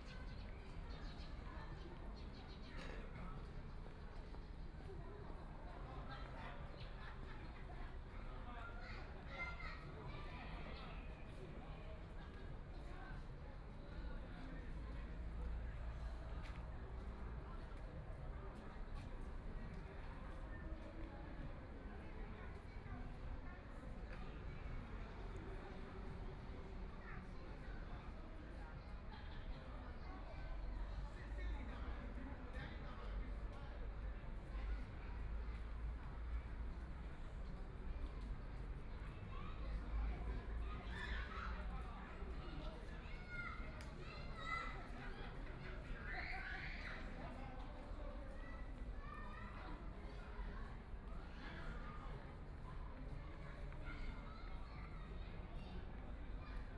Sitting in the park near the entrance plaza, Binaural recording, Zoom H6+ Soundman OKM II
Huangpu, Shanghai, China, November 2013